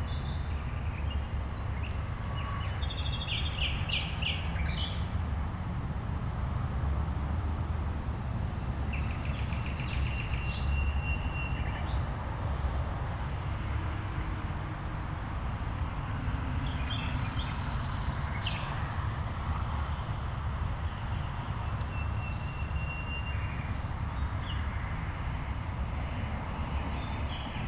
Großer Tiergarten, am sowjetischen Ehrenmal, Berlin, Germany - 3 nachtigallen am sowjetischen ehrenmal, tiergarten
3 nachtigallen (17 augenblicke des fruehlings)
3 nightingales (17 moments of spring)